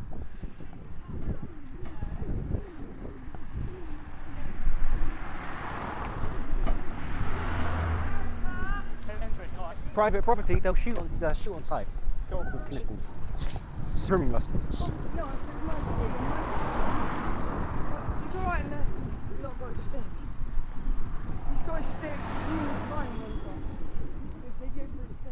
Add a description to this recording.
Foreland - a sound walk we took